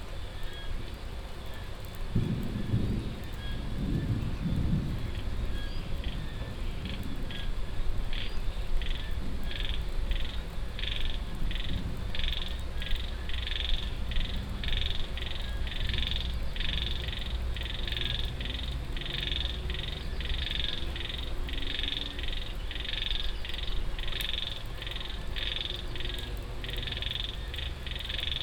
Marvelous clacking frogs at the pond in front of Puh Annas amazing guesthouse, so quiet and beautiful.
Amphoe Hot, Chang Wat Chiang Mai, Thailand, 20 August 2017, 19:30